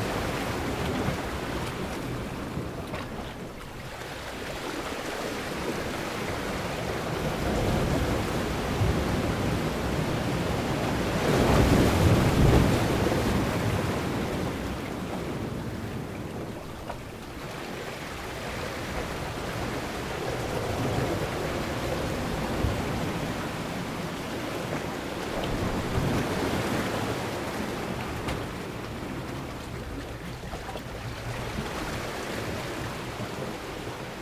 Au bout de l'île. Marée montante. Des vagues et des rochers.
At the end of the island. Rising tide. Waves, rocks.
April 2019.